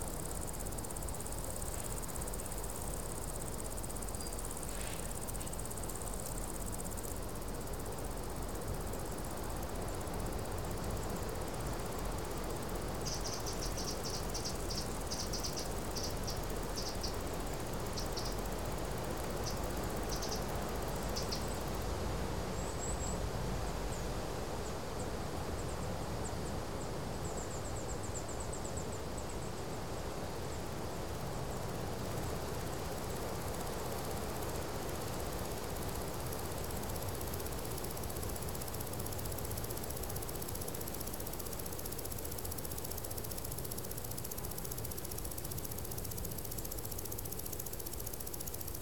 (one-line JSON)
{"title": "Robertstown, Co. Meath, Ireland - AMT - delicate insects and wind", "date": "2014-07-25 15:00:00", "description": "hot afternoon, windy, in tree alley in between fields, insect + birds + swallows + distant dogs + wind. equip.: SD722 + Rode NT4.", "latitude": "53.82", "longitude": "-6.82", "altitude": "107", "timezone": "Europe/Dublin"}